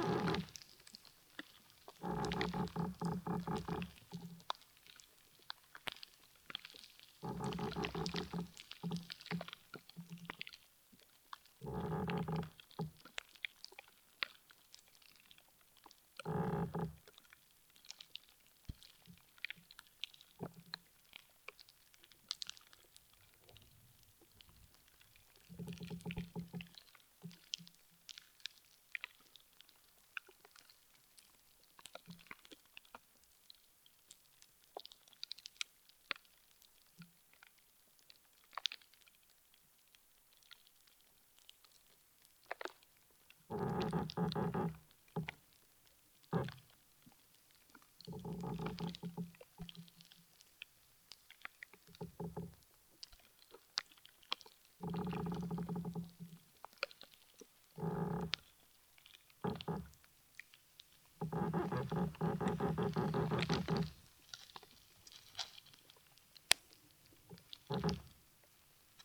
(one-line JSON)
{"title": "Lithuania, Utena, moaning tree and ants", "date": "2011-09-15 16:20:00", "description": "contact microphones put into earth near the pine tree...", "latitude": "55.52", "longitude": "25.60", "altitude": "116", "timezone": "Europe/Vilnius"}